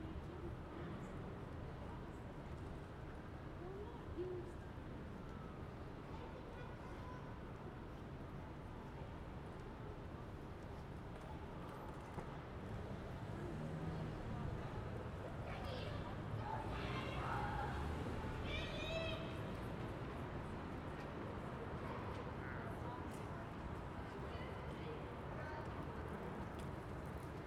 Quiet and peaceful evening start on Saint Patrick's day. The small amount of vehicles let the ear to pay attention to many other sound sources that coexist along the street. This is the soundwalk's final stop on my visit to Dublin.
You can listen the rest of it on the link below.
Dublin, Ireland, 17 March 2014, 17:12